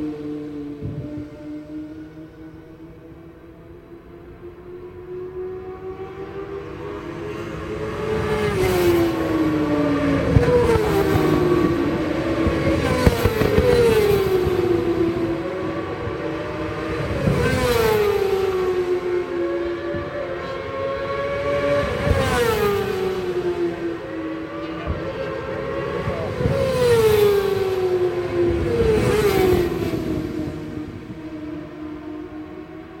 Brands Hatch GP Circuit, West Kingsdown, Longfield, UK - WSB 2003 ... Supersports ... FP ...
WSB 2003 ... Supersports ... free practice ... one point stereo to minidisk ... date correct ... time not so ..?